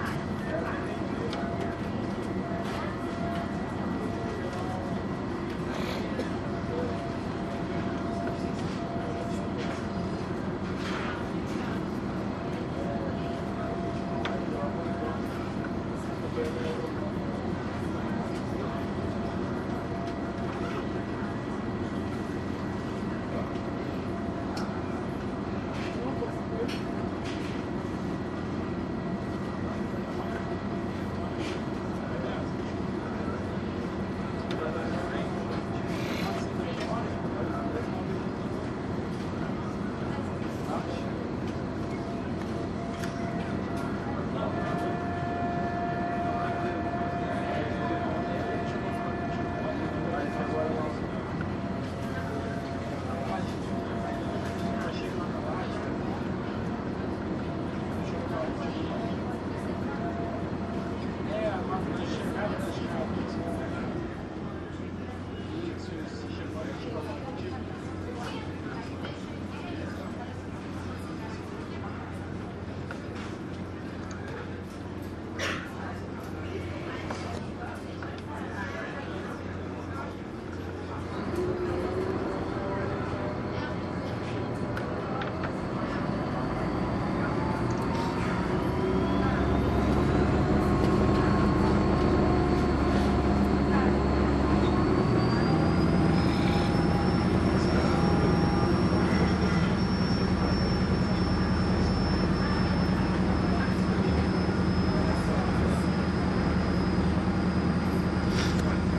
Recording the environment ferry terminal in Niterói: Araribóia Square, waiting room and passenger space inside the boat. The recording was made with a mini-digital recorder.
Gravação do ambiente do terminal de barcas da cidade de Niterói: Praça Araribóia, sala de espera dos passageiros e espaço interno da barca. A gravação foi realizada com um mini-gravador digital.